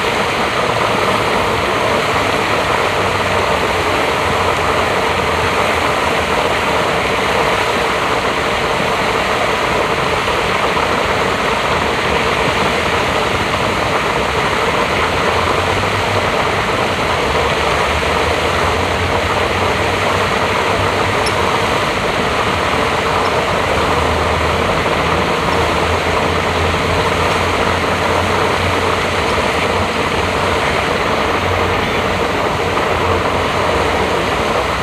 Stadtgarten, Essen, Deutschland - essen, stadtgarten, lake fountain
Im Stadtgarten an einem kleinen Teich. Der Klang der Wasserfontäne.
Im Hintergrund ein singender Obdachloser. Ein Wasservogel chirpt in kurzen Impulsen.
In the city garden at a small lake. The sound of the fountain. In the background a homeless singing.
Projekt - Stadtklang//: Hörorte - topographic field recordings and social ambiences